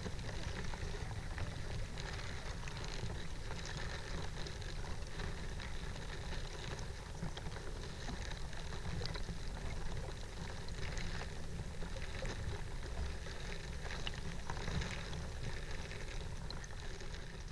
Vyzuonos, Lithuania, a bough in a river - a bough in a river
contact microphone recording. a bough fallen into the river